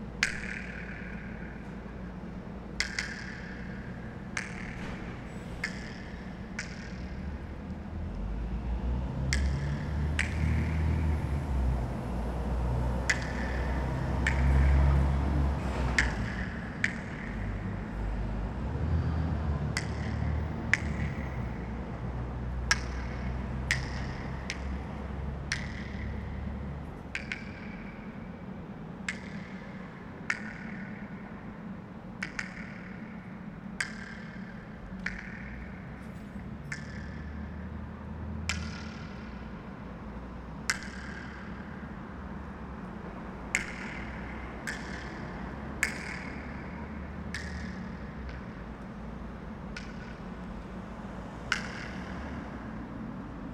echoes under Krieau U-Bahn station, Vienna
testing the reverberation of the concrete space under the Krieau U-Bahn station
Vienna, Austria, 17 August